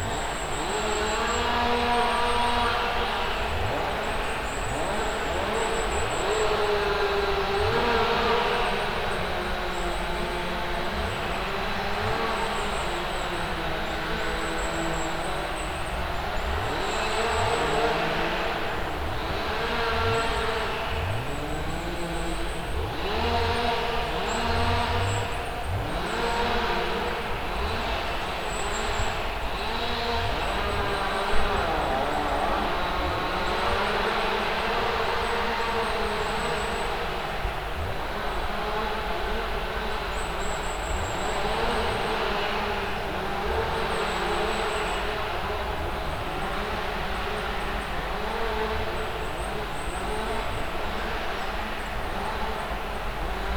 Sudeikių sen., Lithuania, woodcutters
mechanical woodcutters chorus in the forest's ambience
Voverynė, Lithuania